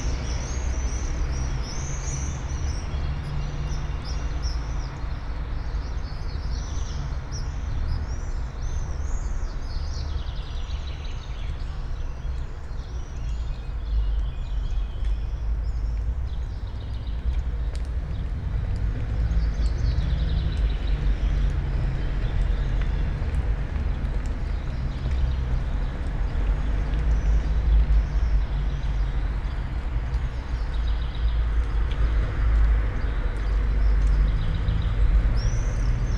all the mornings of the ... - jun 14 2013 friday 07:07
Maribor, Slovenia